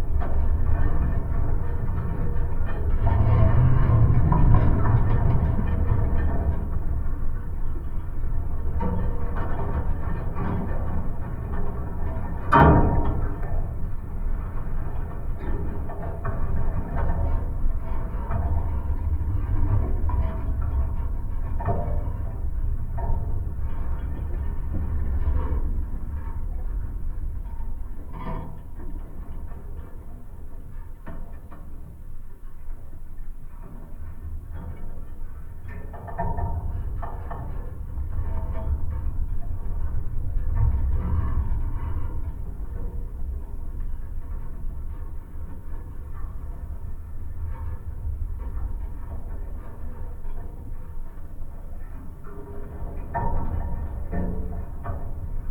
Abandoned farm complex from soviet "kolchoz" times. Some fence gates recorded with a pair of contact mics and geophone